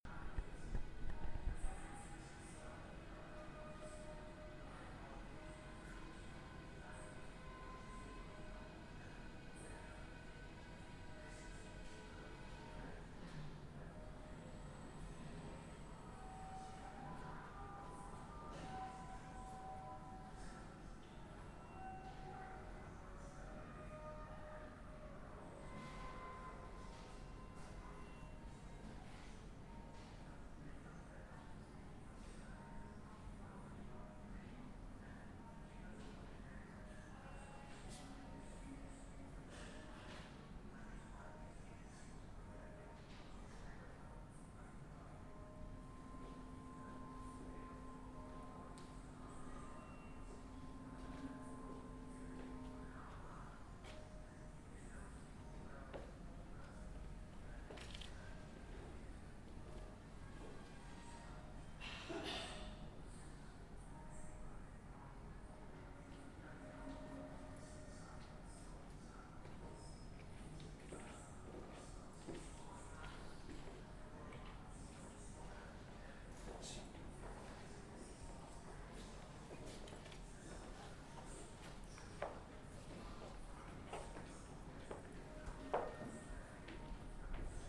Hof van Busleyden, Mechelen, België - Tower bells
[Zoom H4n Pro] Bells of the tower as heard through sounds from the museum exhibitions.
Mechelen, Belgium, February 2019